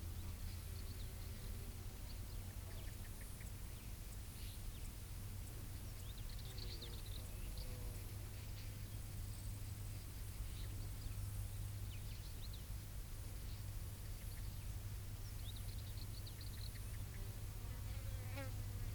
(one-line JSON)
{"title": "Zakynthos, Řecko - birds calls", "date": "2015-06-11 16:01:00", "description": "Birds call late afternoon under the former quarry.", "latitude": "37.74", "longitude": "20.93", "altitude": "240", "timezone": "Europe/Athens"}